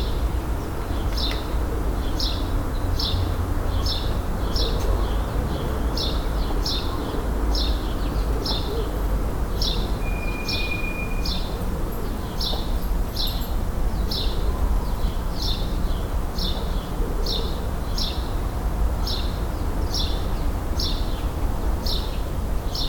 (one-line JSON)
{"title": "Ein Tag an meinem Fenster - 2020-04-01", "date": "2020-04-01 17:20:00", "latitude": "48.61", "longitude": "9.84", "altitude": "467", "timezone": "Europe/Berlin"}